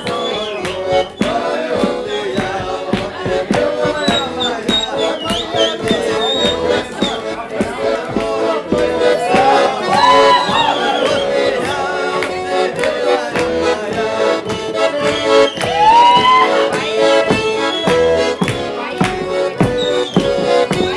Wrangelkiez, Berlin, Deutschland - kvartira 03